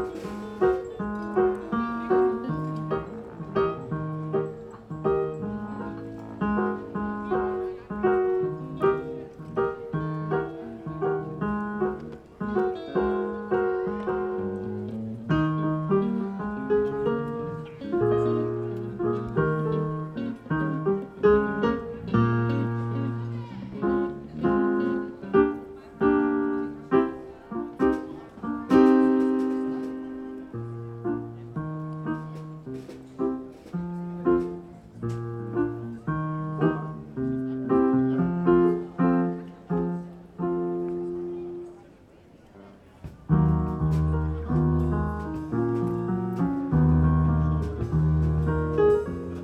Wipperfürth, Marktplatz - teenage jazz band probe / rehearsal